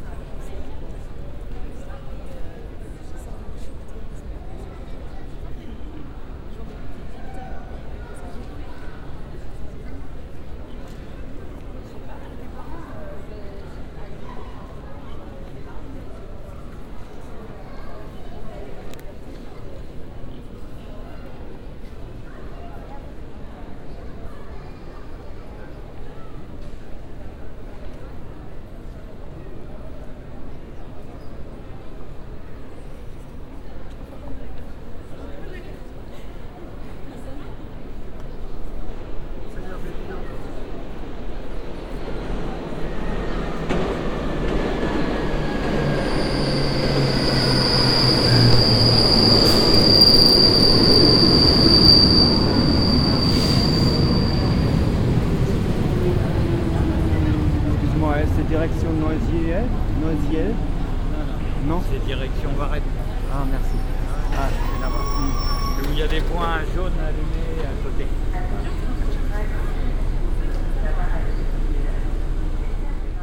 paris, subway station, nation
dense traffic in the afternoon, a train arrives
cityscapes international: socail ambiences and topographic field recordings